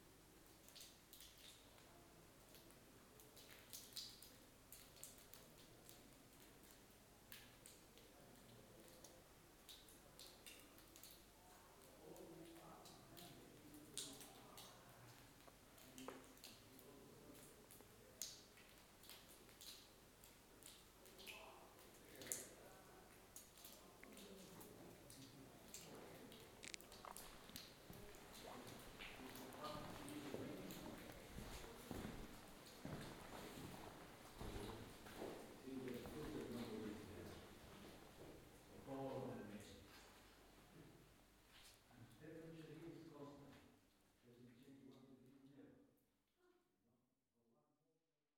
Route des Grottes dHercule, Tanger, Morocco - Caves of Hercules
Recording down in the Roman caves of Hercules.
(Soundman OKM I Solo, Zoom H5)
Tanger-Tétouan-Al Hoceima ⵟⴰⵏⵊ-ⵟⵉⵜⴰⵡⵉⵏ-ⵍⵃⵓⵙⵉⵎⴰ طنجة-تطوان-الحسيمة, Maro, 1 February 2020, 12:30